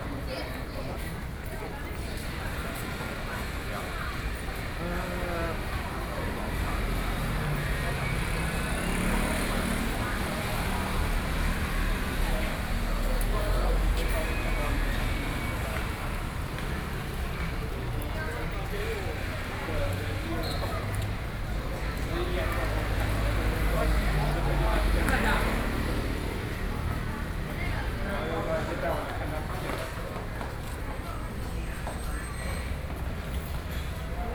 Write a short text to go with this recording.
at the Cinema entrance, Sony PCM D50 + Soundman OKM II